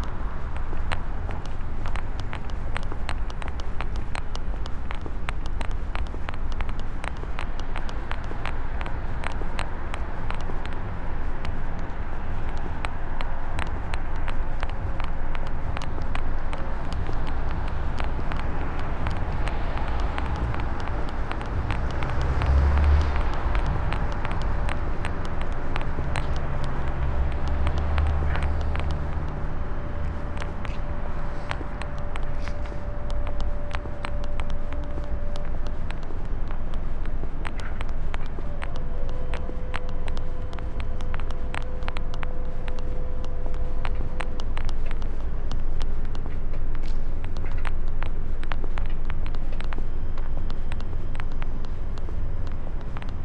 Schloßplatz, Berlin, Germany - walking, night
walk along almost empty streets with new building - future castle - growing on the left side, crossing the bridge, earrings and microphone wires have their own ways
Sonopoetic paths Berlin